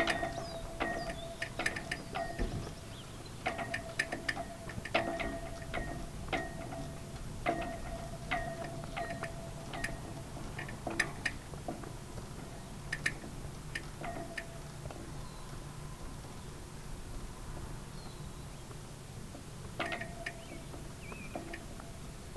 Richards Ave, Santa Fe, NM, USA - Two Flags Flapping on Poles
Two Flags in the wind atop Poles at the entrance of Santa Fe Community College. The ropes bang against the poles. Recorded with Zoom H4 and two Electro-Voice 635A/B Dynamic Omni-Directional mics.